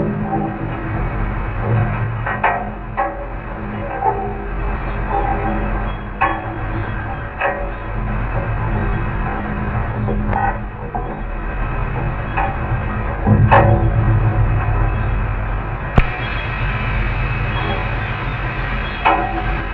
Ulu Pandan Bridge Sunset Way - Ulu Pandan walkway